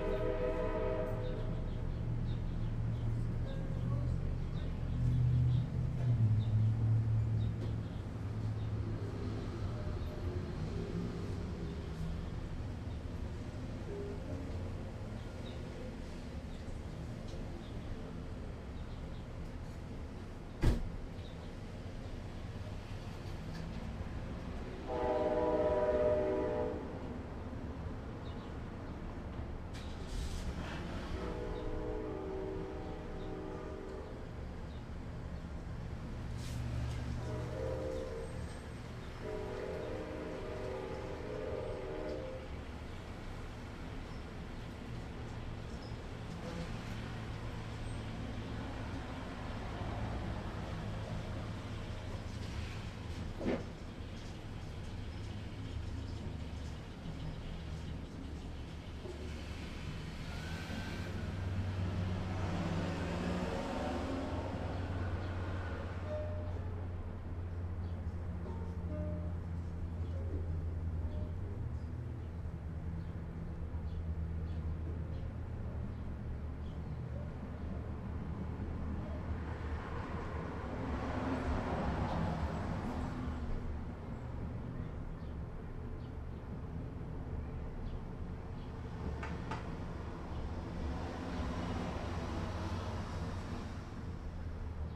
Northwest Berkeley, Berkeley, CA, USA - WLD 2012
delayed contribution to the World Listening Day 2012 - street noise, radio, birds, too much coffee guitar, train - recorded on Wednesday 07/18/2012
18 July